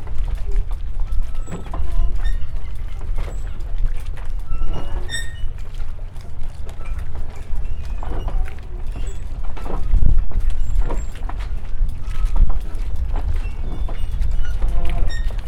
whines of platforms of a floating pier, gulls and lots of wind
Hel, Poland, 28 January 2012